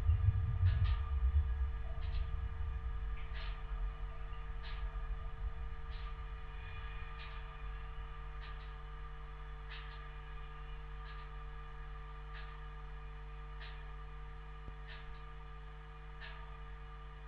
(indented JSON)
{
  "title": "Kareela, NSW, Australia - Black gate at the edge of Kareela oval, near my house",
  "date": "2014-09-06 11:00:00",
  "description": "After waiting for months for my contact microphone to arrive (which i sent off the be fixed and got a replacement instead) due to postage difficulties, I was keen to get out and get some recordings!\nI should mention the photo on Google Earth at the time of me posting this is out of date and is a few years old. There is a different gate now, as well as all the vegetation behind being removed.\nRecorded with two JRF contact microphones (c-series) into a Tascam DR-680.",
  "latitude": "-34.02",
  "longitude": "151.08",
  "altitude": "54",
  "timezone": "Australia/Sydney"
}